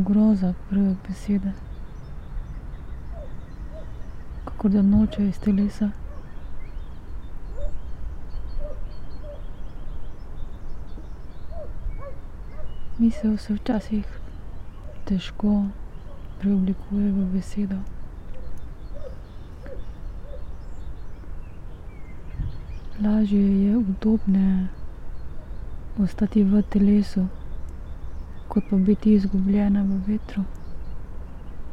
tree crown poems, Piramida - horror of first word, silence of last word